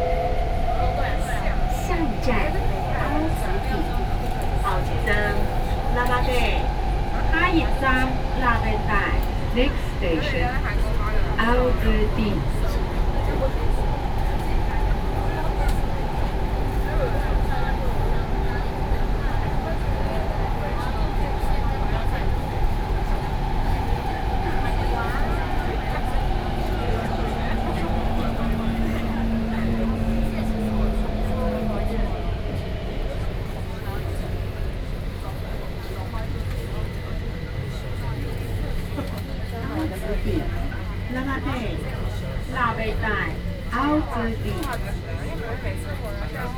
{"title": "Sanmin, Kaohsiung - inside the Trains", "date": "2013-04-20 19:19:00", "description": "inside the MRT train, Sony PCM D50 + Soundman OKM II", "latitude": "22.65", "longitude": "120.30", "altitude": "18", "timezone": "Asia/Taipei"}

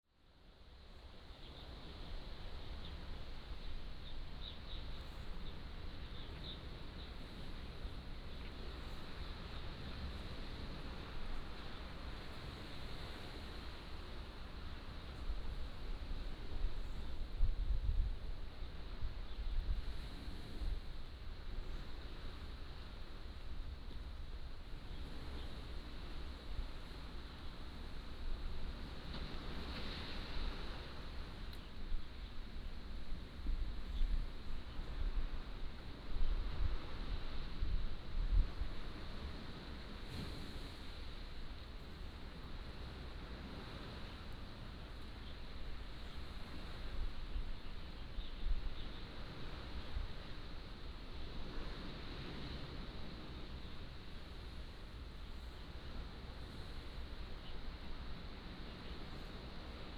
{"title": "白馬尊王廟, Beigan Township - In temple square", "date": "2014-10-13 15:13:00", "description": "In temple square, Sound of the waves", "latitude": "26.21", "longitude": "119.97", "altitude": "22", "timezone": "Asia/Taipei"}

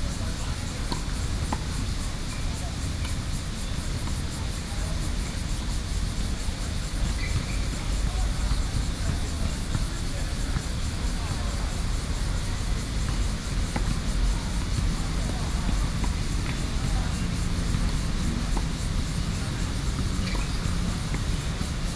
{"title": "NATIONAL TAIWAN UNIVERSITY COLLEGE OF MEDICINE - Basketball and Tennis", "date": "2013-08-06 18:22:00", "description": "Basketball, Tennis, Environmental Noise, Sony PCM D50 + Soundman OKM II", "latitude": "25.04", "longitude": "121.52", "altitude": "12", "timezone": "Asia/Taipei"}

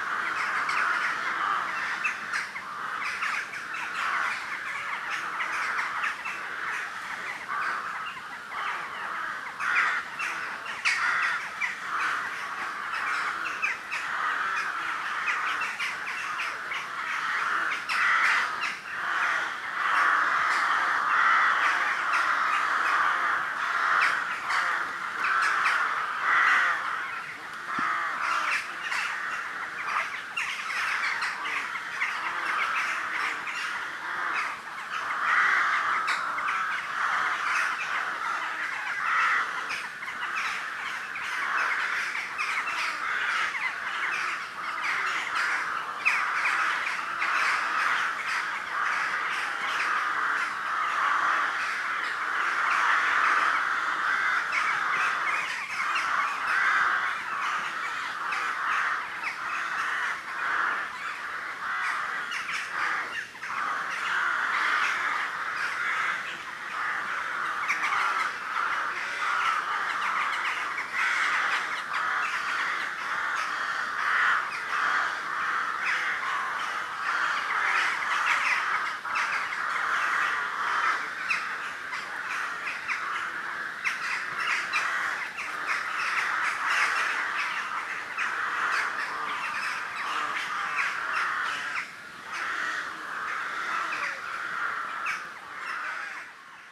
{"title": "Meenvane, Airhill, Schull, Co. Cork, Ireland - Rookery at Dusk", "date": "2019-07-20 20:00:00", "description": "We were having a barbeque when I heard the Rooks start to call as they gathered together for the night. I'd heard them the day before and really wanted to get a recording so I ran as fast as I could and placed my recorder on the ground beneath the trees. Unfortunately I missed the start of their calling. I can't remember the exact time but it was dusk. Recorded on a Zoom H1.", "latitude": "51.53", "longitude": "-9.55", "altitude": "47", "timezone": "Europe/Dublin"}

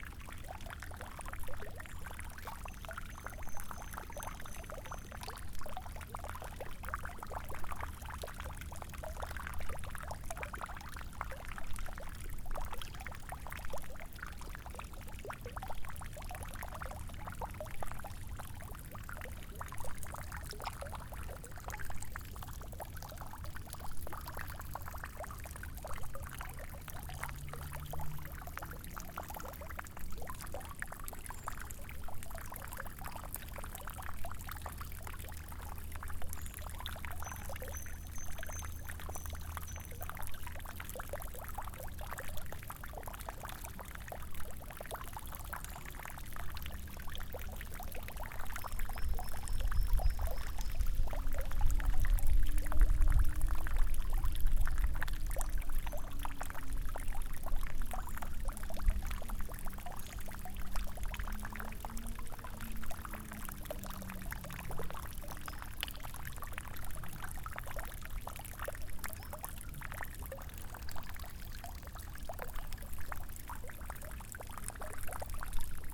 {"title": "Monserrate Sintra, Lisbon, water reservoir", "date": "2010-08-28 12:23:00", "description": "water reservoir, forest, water dropping", "latitude": "38.79", "longitude": "-9.43", "timezone": "Europe/Lisbon"}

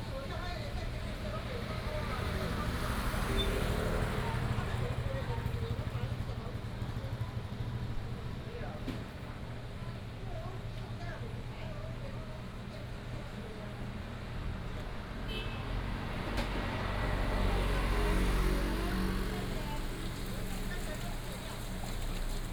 {"title": "阿亮香雞排, 太麻里鄉大王路 - Fried chicken shop", "date": "2018-04-11 18:34:00", "description": "Fried chicken shop, traffic sound, on the street", "latitude": "22.61", "longitude": "121.01", "altitude": "16", "timezone": "Asia/Taipei"}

